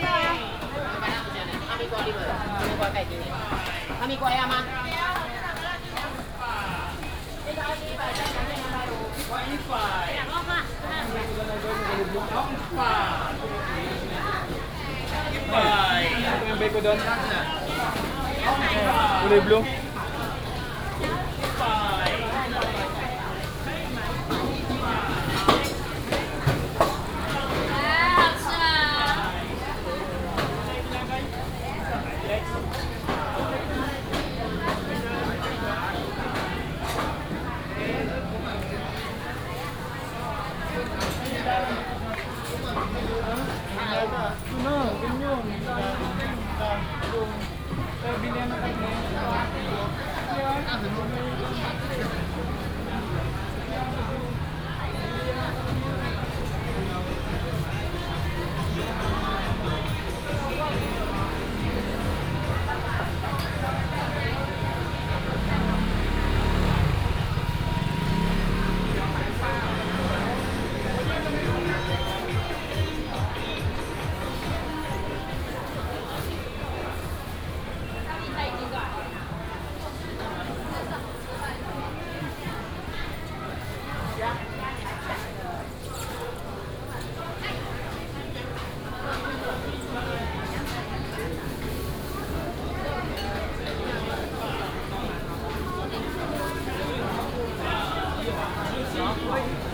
In the alley inside the traditional market, vendors peddling, Binaural recordings, Sony PCM D100+ Soundman OKM II
Hsinchu County, Taiwan